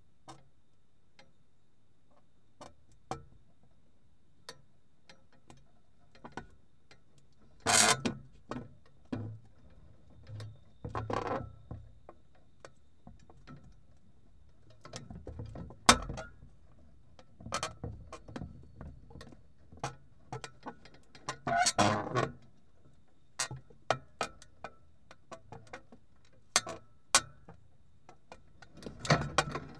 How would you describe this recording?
metalic umbrella moves with the wind, Captation : ZOOM H4n / AKG C411PP